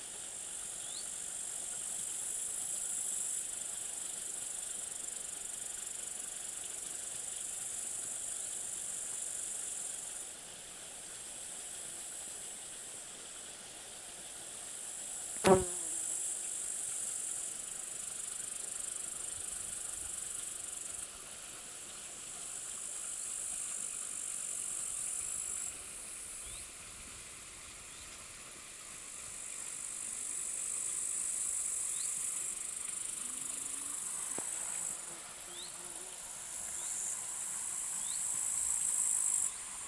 Zákoutí, Blatno, Czechia - Bílina soundscape with bumblebee
Bumblebee next to the side creek of Bilina river
Ústecký kraj, Severozápad, Česko, 4 August 2019, 14:35